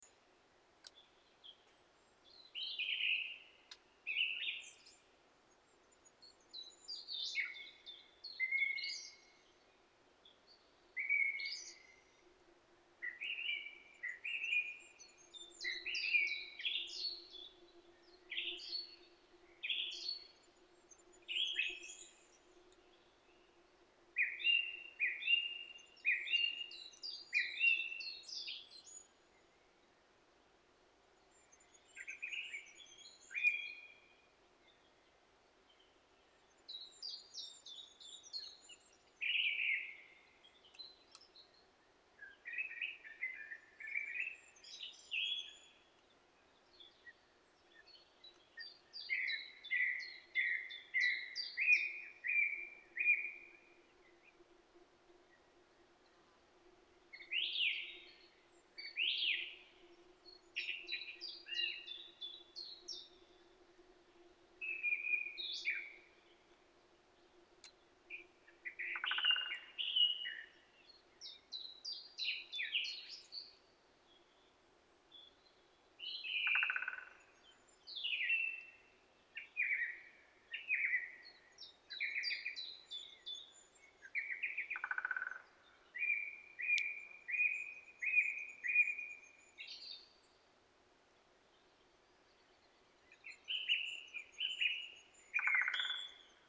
Lithuania, from the abandoned railway
biking on the abandoned railway, in the forest